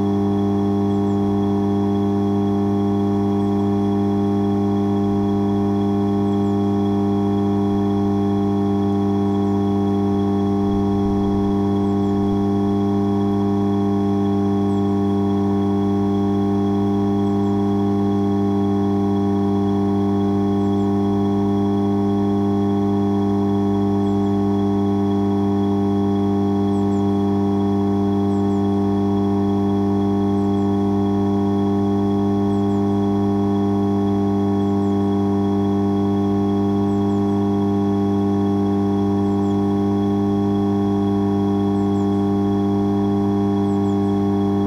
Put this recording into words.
recorded between a big transformer and a few mobile containers attached to the university building. you can hear the transformer on the left side and a hissing sound coming from the containers on the right side (roland r-07)